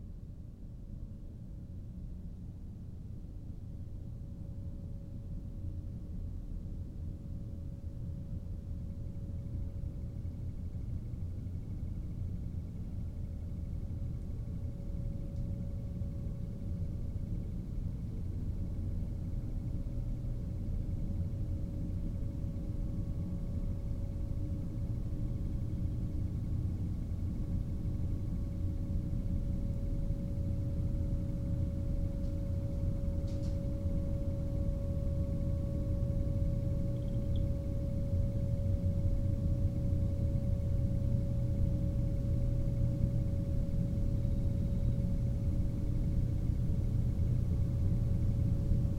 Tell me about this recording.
Multilayered drones dominate the soundscape of a forest surrounding an operational sand plant. A deer announces its displeasure of my being present halfway through. There is no sound manipulation in this recording.